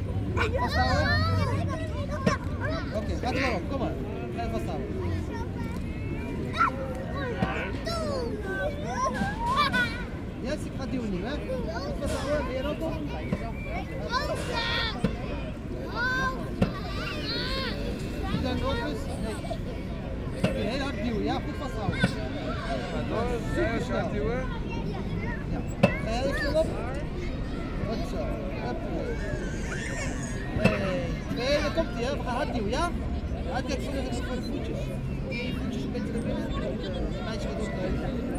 Breda, Nederlands - Kindergarden
During a very sunny week-end, a lot of children playing in the kindergarden.
30 March 2019, 16:20